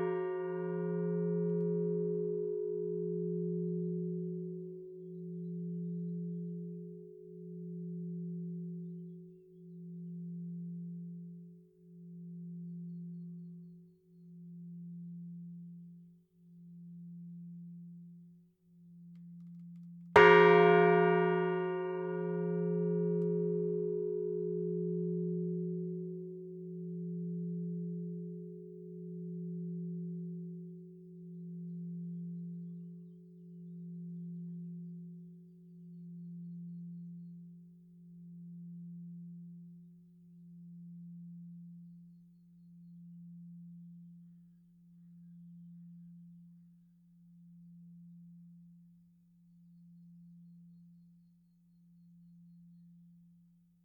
Rue de l'Église, Flines-lès-Mortagne, France - Flines-Lez-Mortagne (Nord) - église
Flines-Lez-Mortagne (Nord)
église - Tintement manuel cloche aigüe
Hauts-de-France, France métropolitaine, France, 28 April, 11:00